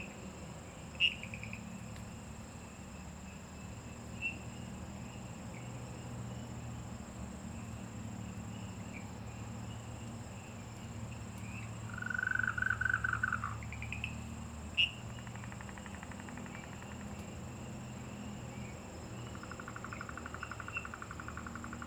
Shuishang Ln., 桃米里, Puli Township - frogs sound
Frogs chirping
Zoom H2n MS+XY
18 April, ~19:00